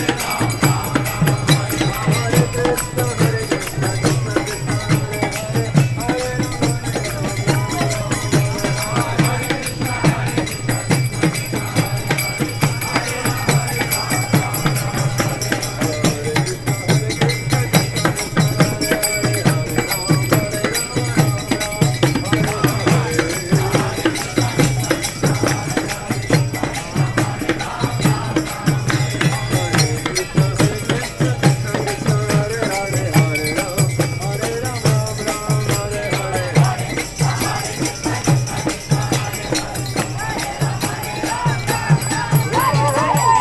Rotušės a., Kaunas, Lithuania - Hare Krishna chant
A group of krishnaites marching and chanting their regular Hare Krishna chant accompanied by drums and percussion. I walk a circle around the gathering before they march away towards Vilnius street. Recorded with ZOOM H5.
Kauno apskritis, Lietuva, March 2020